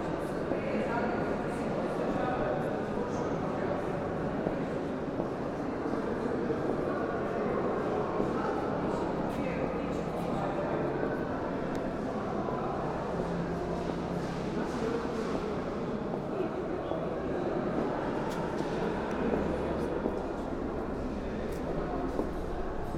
Valvasorjeva ulica, Maribor, Slovenia - empty space
opening of an art exhibition, walk through huge open empty space with few wall barriers